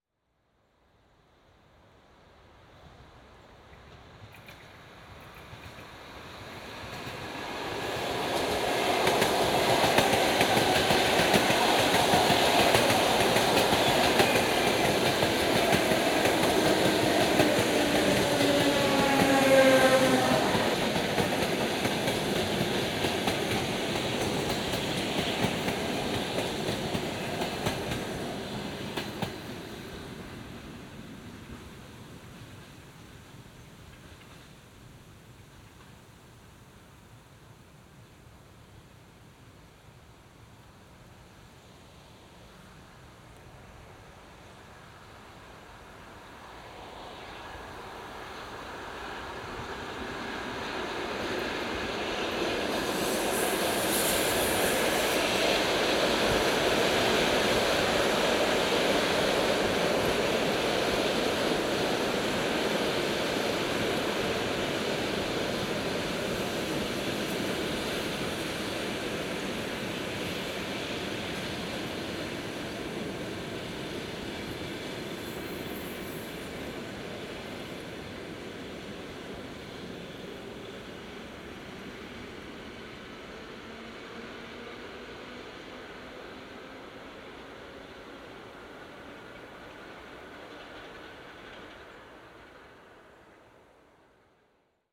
ул. Сакко и Ванцетти, Королёв, Московская обл., Россия - Another two suburban electric trains
Binaural recorded with Zoom H2n and Roland CS-10EM headphones
Центральный федеральный округ, Россия, 23 July 2021